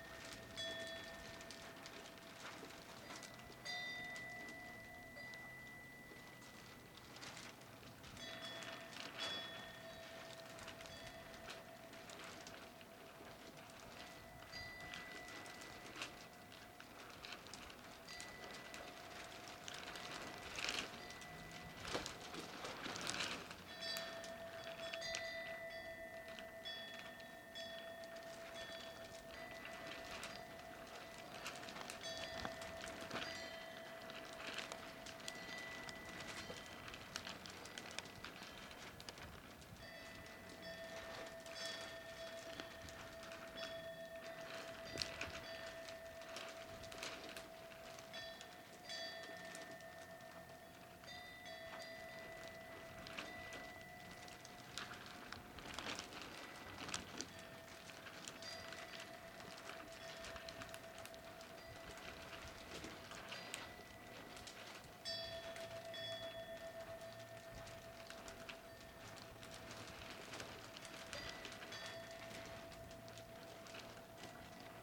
Samak-san Temple - NIght walk
During the winter the Samak-san temple is wrapped in large sheets of plastic to protect its aging wooden structure. The night wind moves the wind chimes that hang from the eaves of the main temple. Heavy vehicle sounds sometimes come up the valley from far below.
Chuncheon, Gangwon-do, South Korea, 11 March 2017